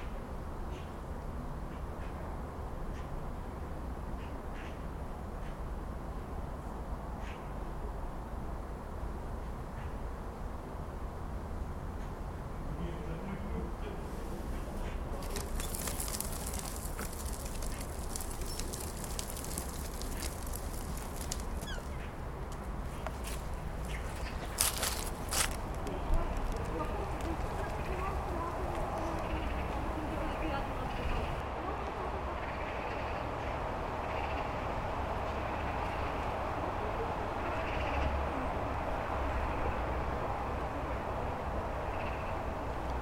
Dablice cemetary
magpies in the high trees of the cemetary in Dablice disctric, 27 December 2009
Prague-Ďáblice, Czech Republic